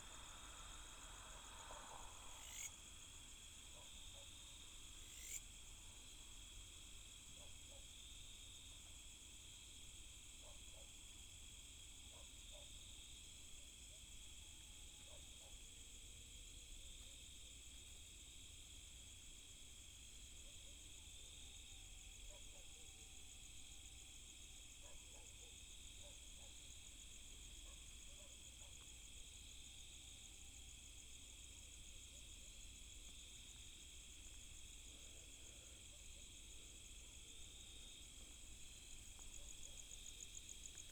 Beside the reservoir, traffic sound, Insect beeps, Dog Barking, Binaural recordings, Sony PCM D100+ Soundman OKM II